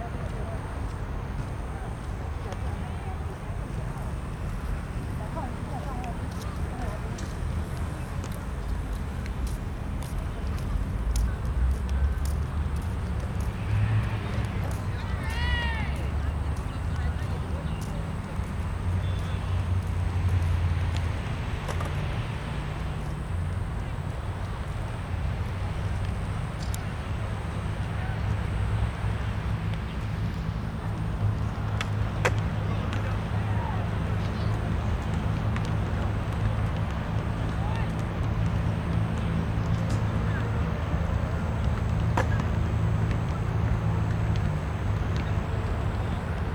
in the Park, Rode NT4+Zoom H4n
Sanzhong District, New Taipei City, Taiwan